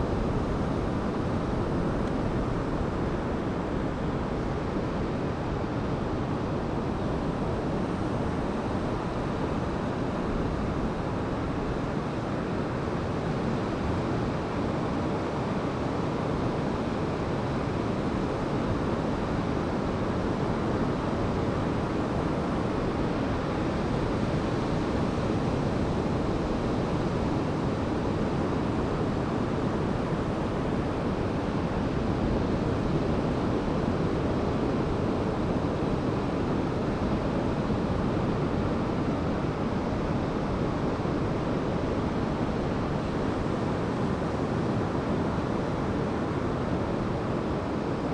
Oosterend Terschelling, Nederland - Netherlands, Terschelling, beach and wind sound
Quiet recording on the island Terschelling in the north of the Netherlands. Stereo recording with primo mics.
Fryslân, Nederland, July 2022